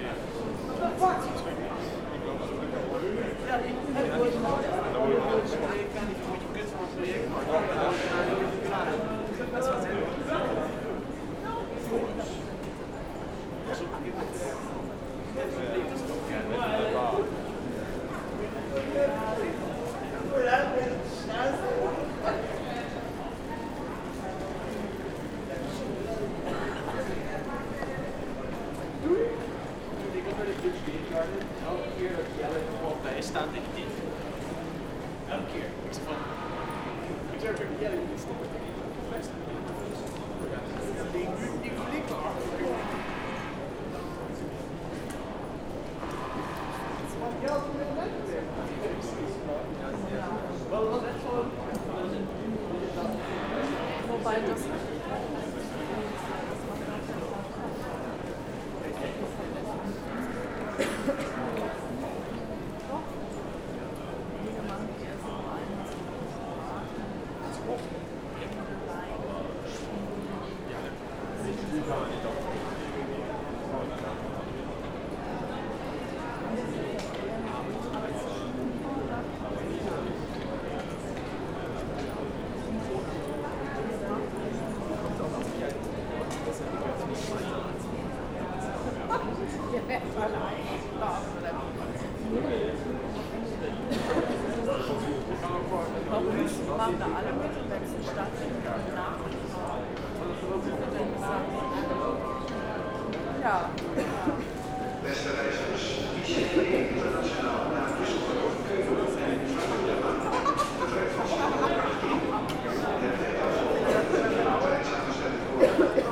Sound of the machines that check the tickets, the international train to Düsseldorf is announced, walk to the platform, the train arrives. Test how the sound changed after seven years and a new station hall.
Recorded with DR-44WL.